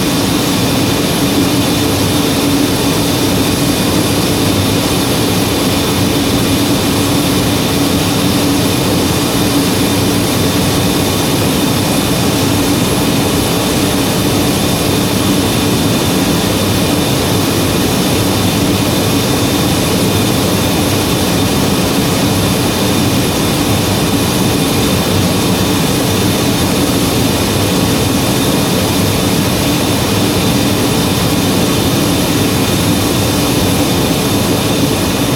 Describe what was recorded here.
Inside the under earth tunnel of the SEO hydroelectric power plant named: Kaverne. The sound of a working turbine. Thanks to SEO engineer Mr. Schuhmacher for his kind support. Stolzemburg, SEO, Wasserkraftwerk, Turbine, Im unterirdischen Tunnel des SEO-Kraftwerks mit dem Namen: Kaverne. Das Geräusch von einer arbeitenden Turbine. Dank an den SEO-Techniker Herrn Schuhmacher für seine freundliche Unterstützung. Stolzemburg, SEO, centrale hydraulique, turbine, Dans le tunnel souterrain de la centrale SEO que l’on appelle : la caverne. Le bruit d’une turbine qui tourne. Merci à M. Schuhmacher, le technicien de SEO pour son aimable soutien.